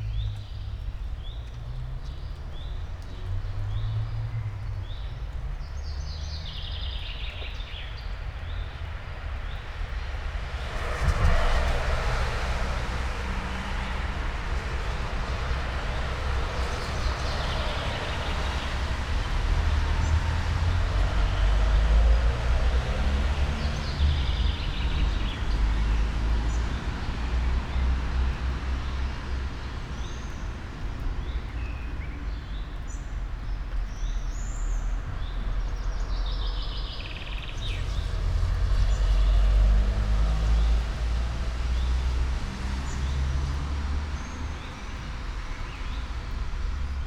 all the mornings of the ... - jul 7 2013 sunday 08:53
Maribor, Slovenia, 7 July 2013